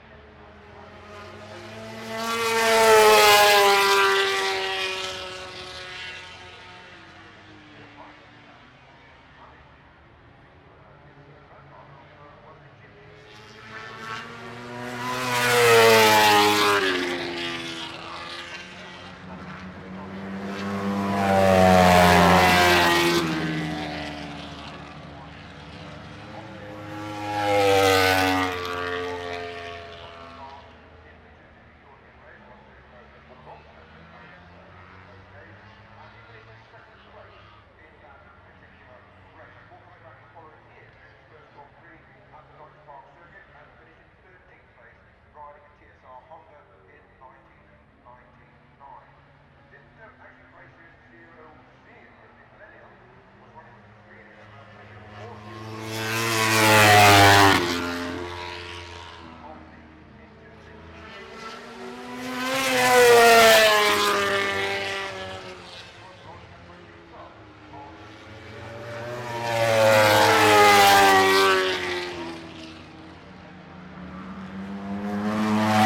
Unnamed Road, Derby, UK - British Motorcycle Grand Prix 2006 ... MotoGP warmup ...
British Motorcycle Grand Prix 2006 ... MotoGP warm up ... one point stereo mic to mini-disk ...